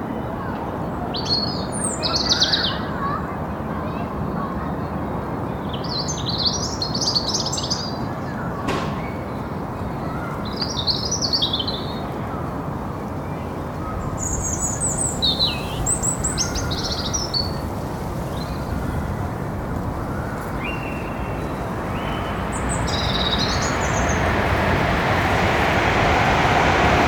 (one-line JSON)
{"title": "Sussex Rd, Watford, UK - Sunny backyard.", "date": "2020-01-21 14:00:00", "description": "In my back garden enjoying a day off work with trains, plans, children playing, bird song, a beautiful sunny 6c day in urban Watford, Hertfordshire. MixPre 10 II with my MKH50 boomed 4 meters aloft pointing north. 2 poly.", "latitude": "51.67", "longitude": "-0.41", "altitude": "85", "timezone": "Europe/London"}